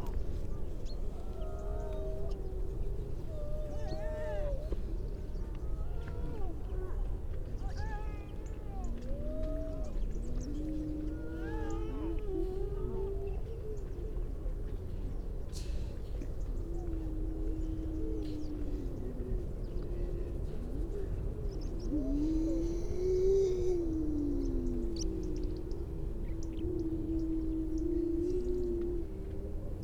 Unnamed Road, Louth, UK - grey seals soundscape ...
grey seals soundscape ... generally females and pups ... bird calls ... pied wagtail ... starling ... chaffinch ... pipit ... robin ... redshank ... crow ... skylark ... curlew ... all sorts of background noise ...
3 December 2019, ~10:00